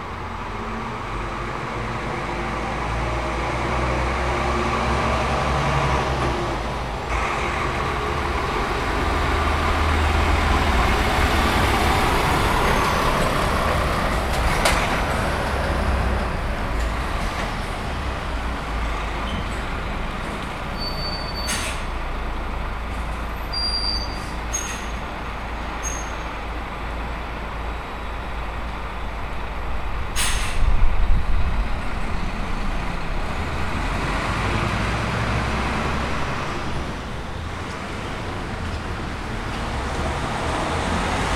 Brock St, Lancaster, UK - The Bells of Lancaster Town Hall
The bells of Lancaster Town Hall. Recorded using the built-in microphones of a Tascam DR-40 in coincident pair with windshield.